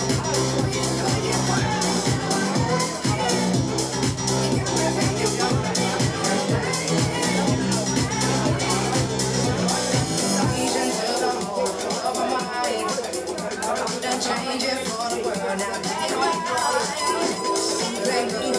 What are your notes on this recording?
partying people at one of the most famous bars/clubs in berlin, the city, the country & me: may 25, 2015